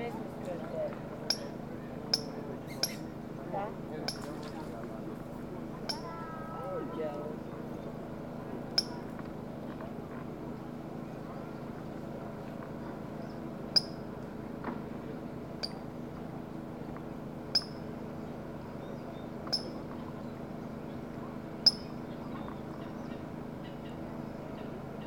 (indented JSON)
{
  "title": "Trakai, Lithuania, on a lake shore",
  "date": "2018-06-03 12:30:00",
  "latitude": "54.65",
  "longitude": "24.93",
  "altitude": "145",
  "timezone": "Europe/Vilnius"
}